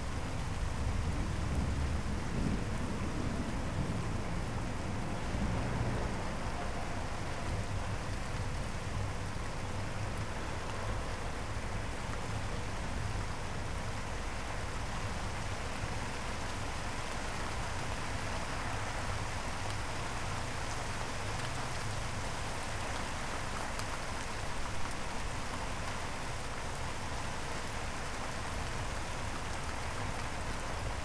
Kærengen, Taastrup, Denmark - Summer rain and thunder
Heavy rain and distant thunder. Dropping water makes some drum sounds
Pluie d’été et tonerre lointain. Des gouttes de pluis genèrent un bruit de percussion